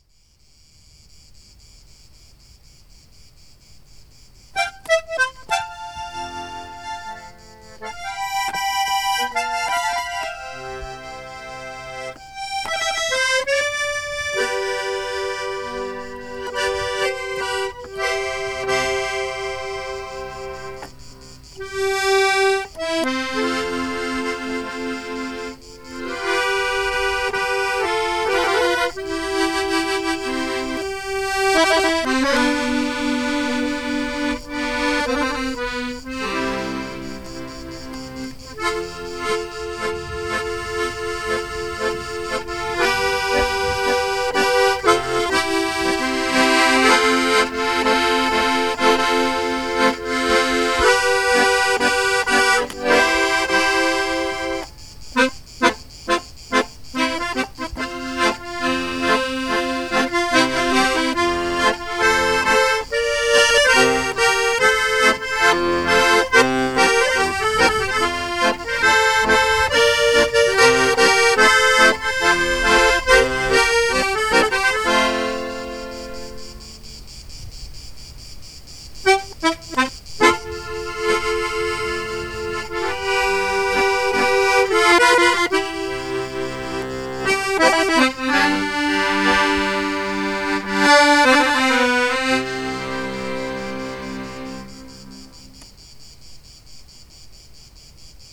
Island Korcula, Croatia, crickets and accordion - a forgotten village
Andrija Bilis playing accordion in front of his house in Zrnovo
26 August 1996